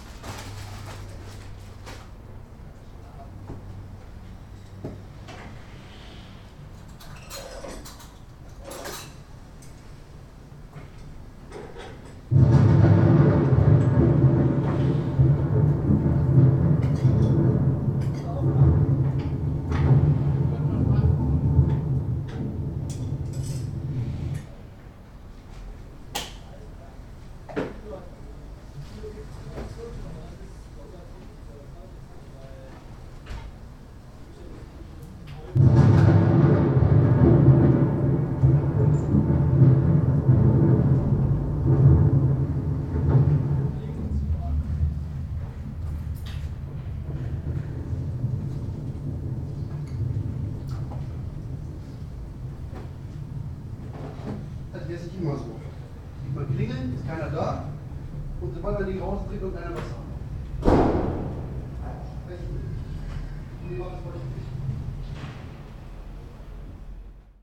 Köln, Maastrichter Str., backyard balcony - balcony, plumber, artificial thunderstorm
Tue 05.08.2008, 12:20
a plumber tries to repair the water-tap in my bathroom, producing a mess first, then blaming others about it. meanwhile, a plane crosses, and a heavy thunderstorm comes out of the film studio's open window below. the sky is blue and it's hot...
Köln, Deutschland, 5 August 2008, ~12:00